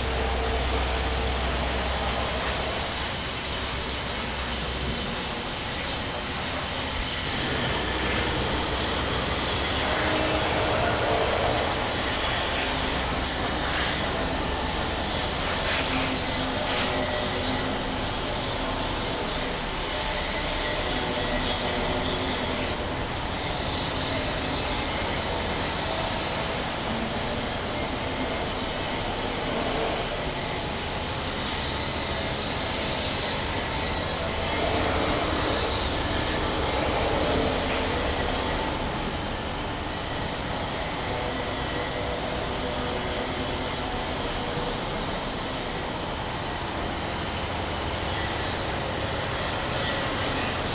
EC-1 od strony ul. Kilinskiego, Lodz
EC1 Lodz, autor: Aleksandra Chciuk